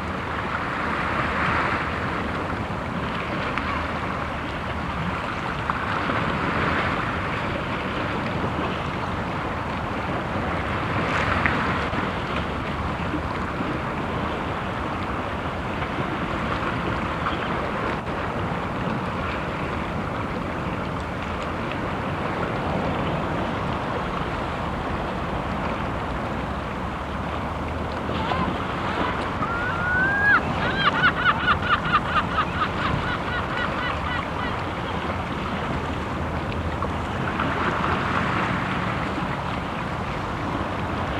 Åkrehamn, Norwegen - Norway, Akresand, beach
At the beach of Akresand on a mild windy summer day. The sound of water waves on the sandy beach and a seagull crying in the wind. In the distance a motor boat.
international sound scapes - topographic field recordings and social ambiences
28 July 2012, 13:30